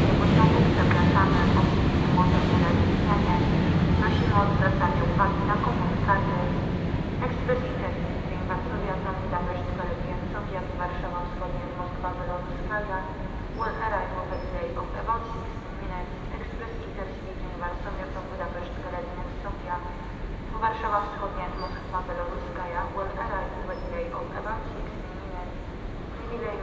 Railway Station, Katowice, Poland - (54) Train anouncements at the railway station
Train anouncements at the railway station.
binaural recording with Soundman OKM + ZoomH2n
sound posted by Katarzyna Trzeciak
województwo śląskie, Polska